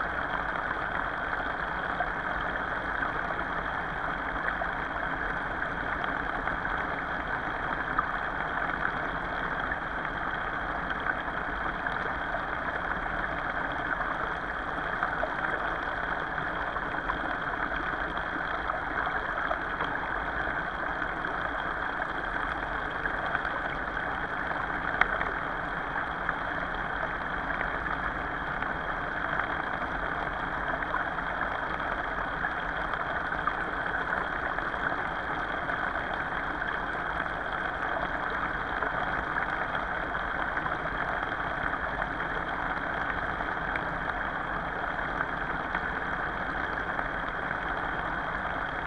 Utena, Lithuania, underwater pipe
hidrophones recording of the underwater pipe found in the little river
30 May 2015